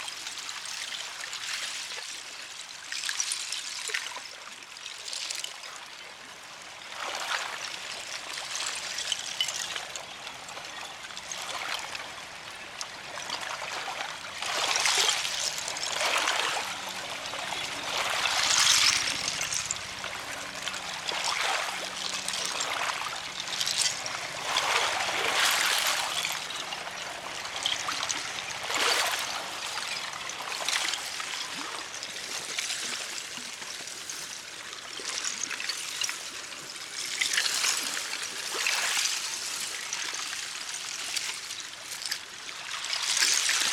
Ontario Place, Lake Shore Blvd W, Toronto, ON, Canada - ice clinking
Ice pieces clinking on gentle waves of Lake Ontario.
3 February 2021, ~02:00